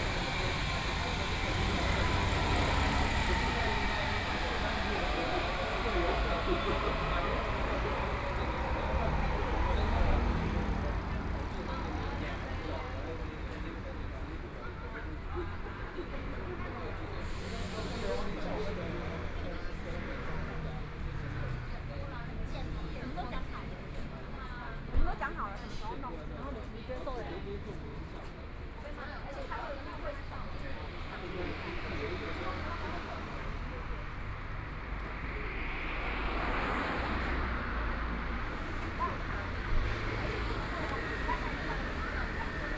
Xihuan Rd., Xihu Township - In front of the beverage store
In front of the beverage store, Traffic Sound, Zoom H4n+ Soundman OKM II, Best with Headphone( SoundMap20140104- 3b )
Changhua County, Taiwan, 4 January 2014, 20:18